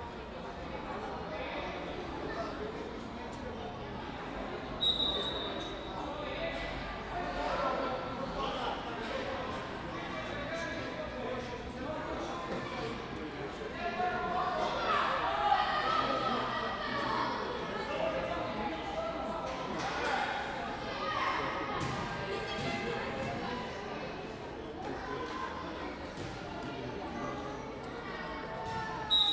Campolide, Portugal - Jogo da bola
Jogo de futebol gravado no campo do Liberdade Atlético Clube, no Bairro da Liberdade, Lisboa.
25 October 2014, 17:52